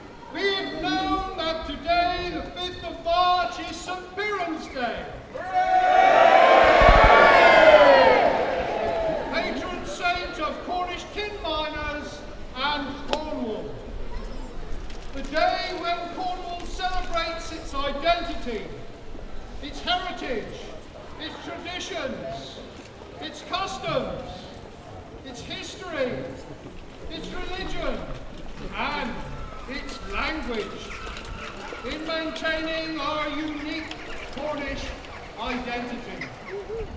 High Cross, Truro Cathedral, Truro, Cornwall, UK - St Piran's Day
Recorded with a shotgun mic.